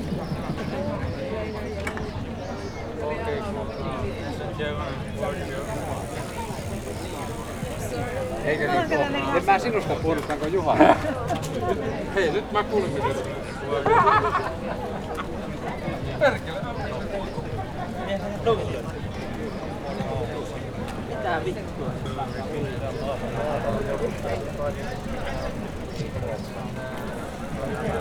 During warm summer evenings people like to gather around at the waterfront next to the market square of Oulu. Zoom H5, default X/Y module
Pohjois-Pohjanmaa, Manner-Suomi, Suomi